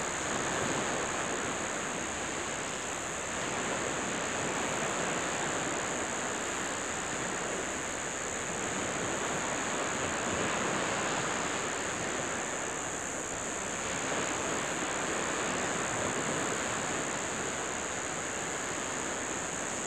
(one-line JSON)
{"title": "Cape Tribulation, QLD, Australia - dusk on myall beach", "date": "2014-01-01 18:00:00", "description": "sound from my film \"Dusk To Evening On Myall Beach\".\nmicrophone was placed on the sand facing the forest which gives an odd sound to the recording.\nrecorded with an AT BP4025 into an Olympus LS-100.", "latitude": "-16.09", "longitude": "145.47", "altitude": "10", "timezone": "Australia/Brisbane"}